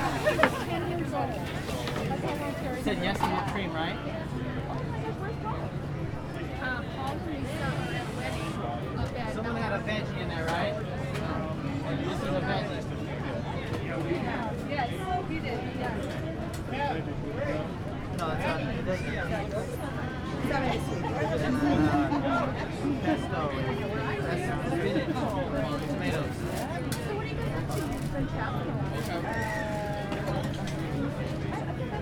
neoscenes: Farmers Market crepe stand